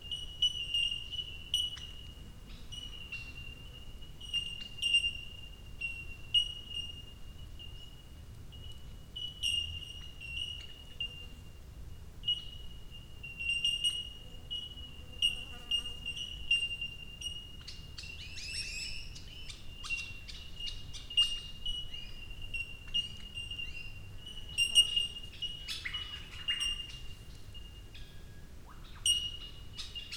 Blue Mountains National Park, NSW, Australia - Bellbirds in the blue mountains